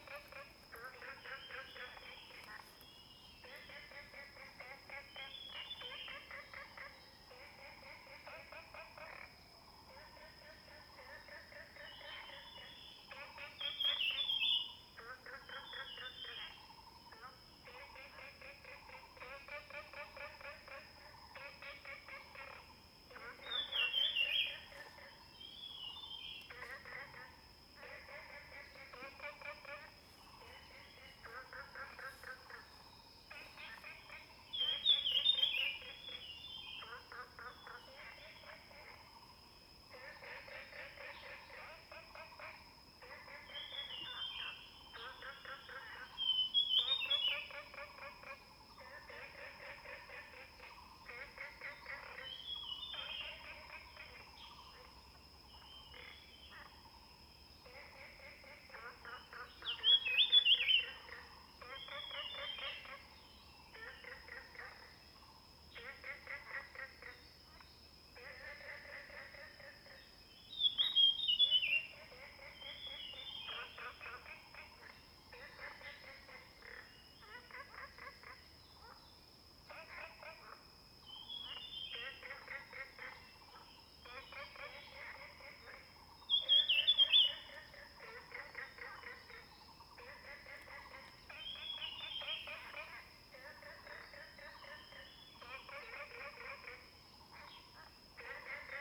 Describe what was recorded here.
Frog sounds, In the woods, Faced with ecological pool, Zoom H2n MS+XY